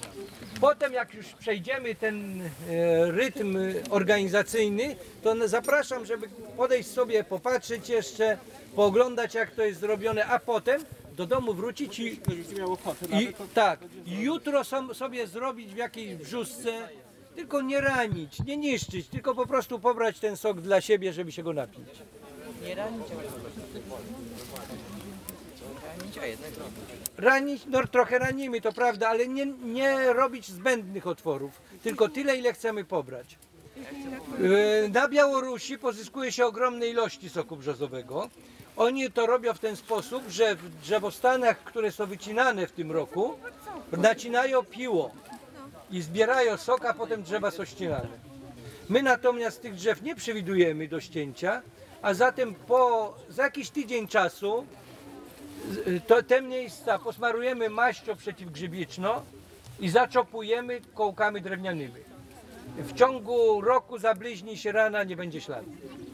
Poczopek, Silvarium, Polska - Opowieść o soku brzozowym, cz.2
opowieść o tym jak się pozyskuje sok z drzewa brzozowego
Polska, European Union, 20 April, ~09:00